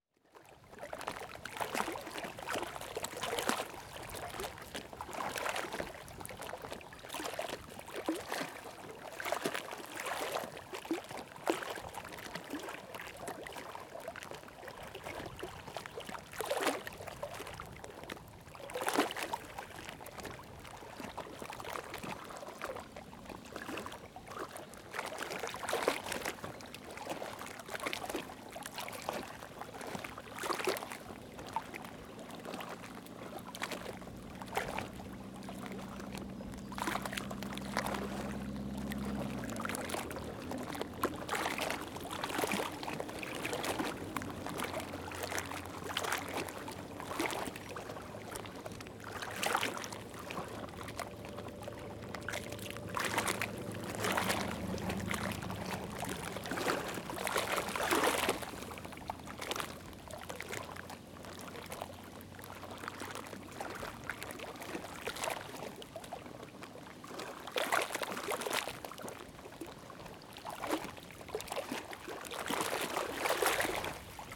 Lac de Rillé, Rillé, France - Water of the Lathan
Recorded on a Zoom H4n internal mics.
The wind was pushing the water onto the rocks at the side of the road, and also rustling the reeds nearby to the right.